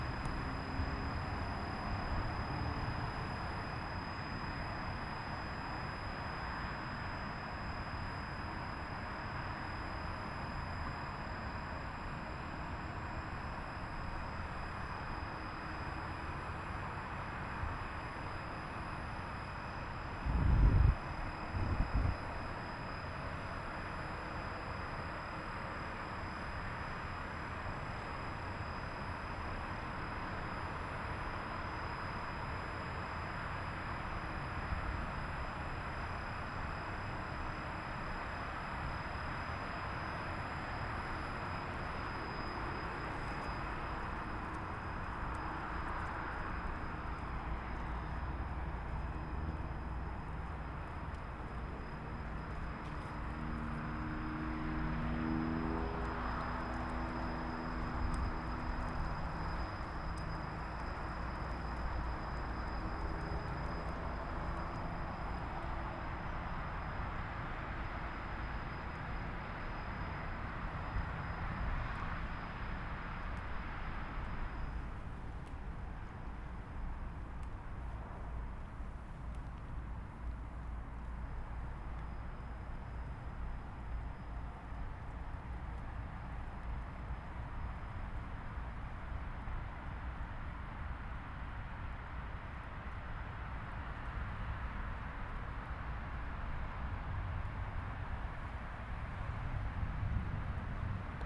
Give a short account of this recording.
The wasted sound of the ''Tweedecoentunnel''.